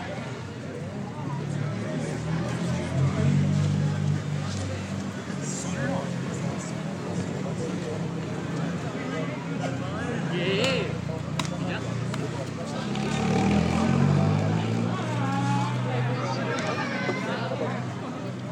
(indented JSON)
{
  "title": "Dg. 40b Sur, Bogotá, Colombia - Park No. 2 New Villa mayor",
  "date": "2021-05-22 21:00:00",
  "description": "Neighborhood Park No. 2 New Villa mayor, in this screenshot you can hear a busy park, a lot of people talking, and eating there is a nearby arepa and/or playing. Near the park there is a small tavern, this is the origin of the music that can be heard in the distance, on the other hand, cars and motorcycles are heard circulating in the area.",
  "latitude": "4.59",
  "longitude": "-74.13",
  "altitude": "2560",
  "timezone": "America/Bogota"
}